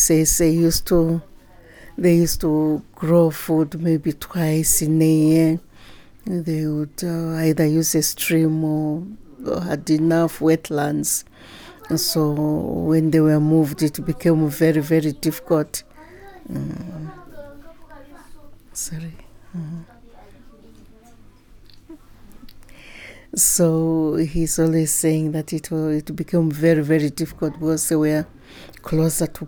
Chiefteness Mwenda was a baby girl of three at the time of the forced removal; but her father came from the valley, and the memory of the forced removal and resettlement of the Tonga people and, of the Tonga culture and tradition was very much present in the family when Eli Mwiinga was growing up... in this part of the interview, i encourage Chiefteness Mwenda to tell us a little more what the presence of this history means to her...
the entire interview with the Chiefteness is archived here:
4 September 2018, 17:25